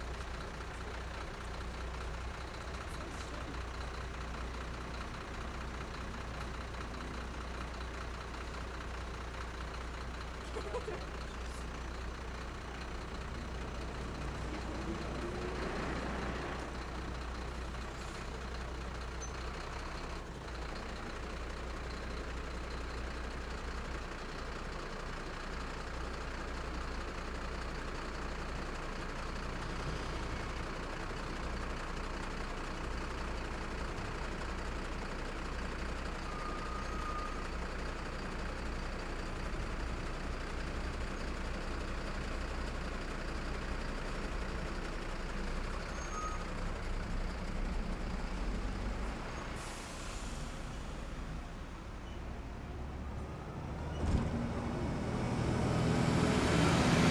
Walking Holme Sids Cafe
Traffic passing at the central junction in Holmfirth. Walking Holme